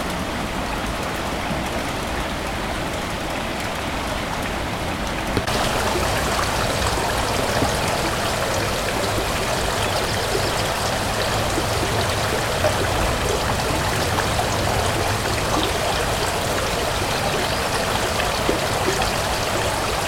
Nova Gorica, Slovenija, Bazen - Voda Brez Plavalca
Nova Gorica, Slovenia